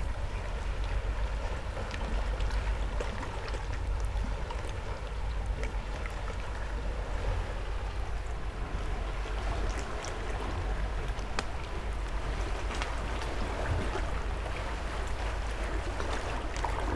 Triq Għajn Tuta, Il-Mellieħa, Malta - water off the trail
water splashing in the small, rocky dock. it's a hidden dock, some kind of small ship facility hidden in the rock cliff. seemed abandoned. a concrete platform, 3 or four buildings, sort of warehouse type, in complete ruin. place covered in various trash. substantial amount of trash also in the water. every splash moves about a bed of plastic in all forms and shapes. (roland r-07)